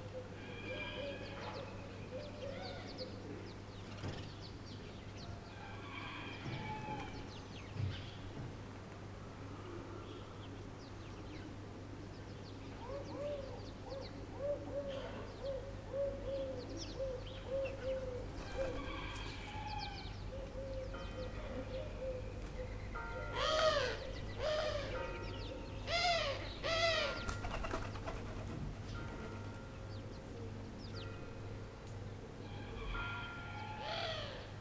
Corniglia, hostel - thin air
crisp morning ambience over the Corniglia village. church bells have almost all the air molecules for themselves. flocks of pigeons racing over the building. bird calls loop and swirl. first delivery truck departs.
La Spezia, Italy, 6 September, ~07:00